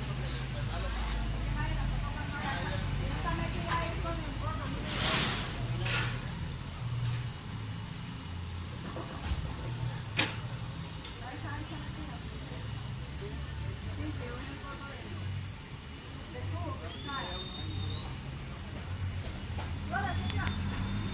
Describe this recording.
Ambiente sonoro del paradero de buses en el barrio San jorge.